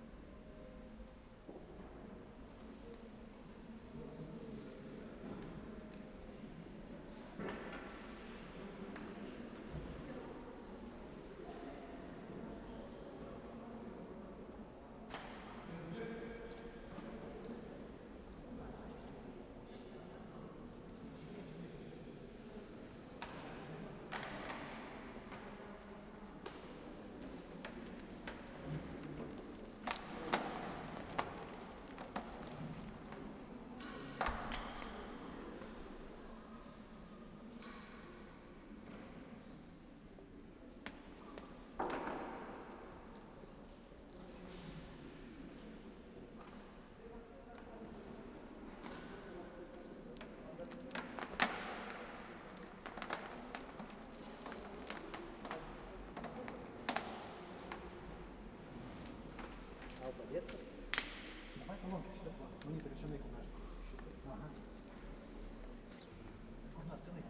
Lithuania, Utena, in the church after a concert
ambience of the modern church just after the choir music festival...